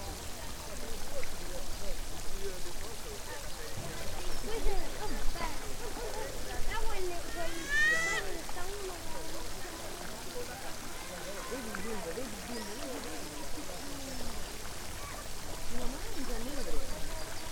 Perugia, Italia - the fountain few hours later
Perugia, Italy, 22 May 2014, ~18:00